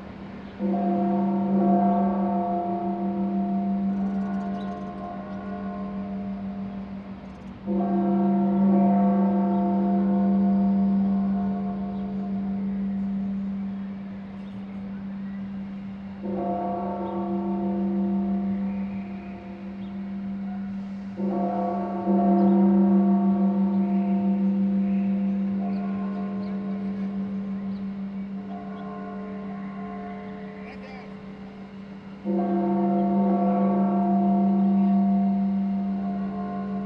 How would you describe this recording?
Recorded with a pair of DPA 4060s and a Marantz PMD661